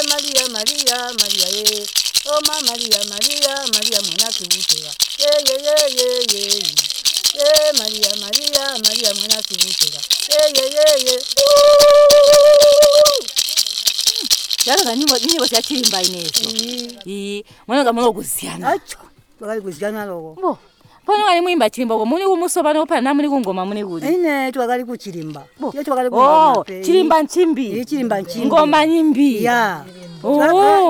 ... when it was time for entertainment the girls played Chilimba songs together...
recordings by Lucia Munenge, Zubo's CBF at Sikalenge; from the radio project "Women documenting women stories" with Zubo Trust, a women’s organization in Binga Zimbabwe bringing women together for self-empowerment.